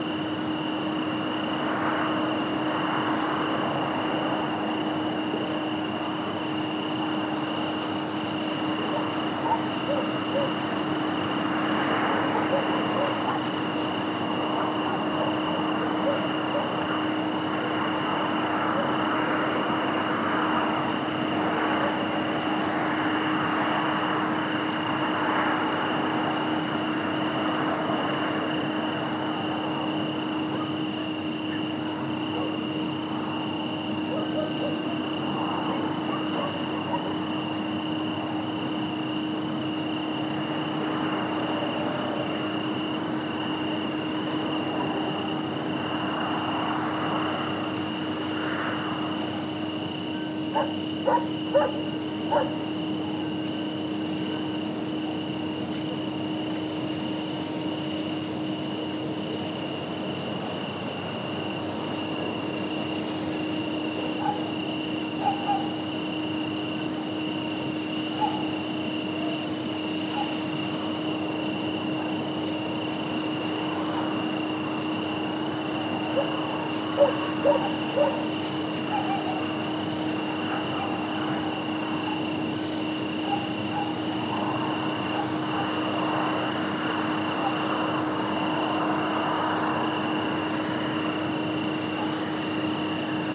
SBG, Roc Llarg - noche
Ambiente nocturno en el mirador de Roc Llarg, a unos 900m de altitud, con una de las vistas mas amplias de la plana de Vic.
Gurb, Spain